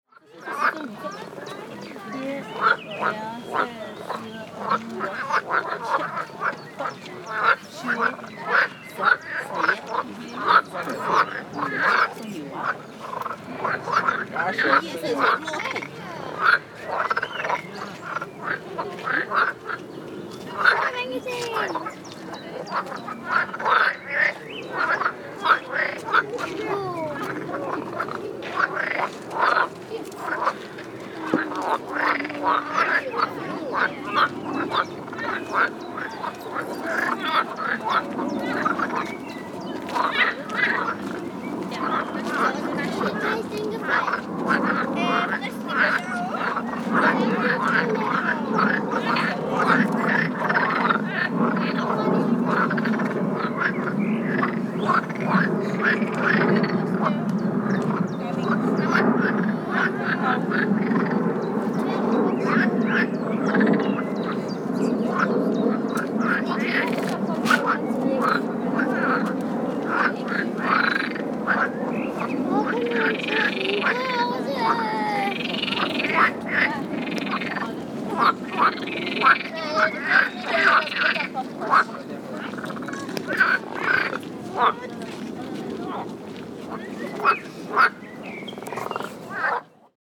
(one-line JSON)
{"title": "Amsterdamer Str., Köln, Deutschland - 90s - Quackende Frösche / quacking frogs", "date": "2018-05-06 14:16:00", "description": "Köln, Flora, Botanischer Garten, Teich, Frösche, Cologne, Botanical Garden, Pond, Frogs", "latitude": "50.96", "longitude": "6.97", "altitude": "45", "timezone": "Europe/Berlin"}